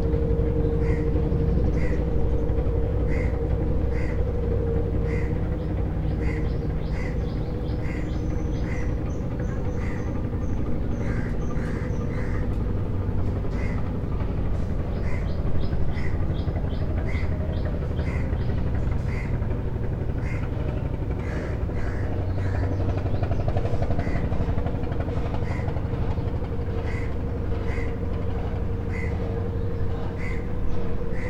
A small dock on the bank of Rupsa river in Khulna. It was a holiday. So the dock was not busy. There were one or two repairing works going on.